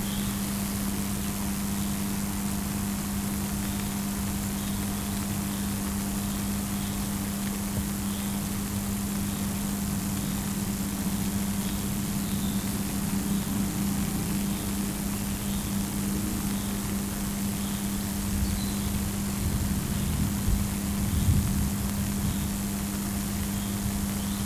There is power line cracle sound after the rain and with some nature and other sounds like thunder, flies, birds' singing and etc...
Recorded with Zoom H2n in MS mode
Московская область, Центральный федеральный округ, Россия, 15 June 2021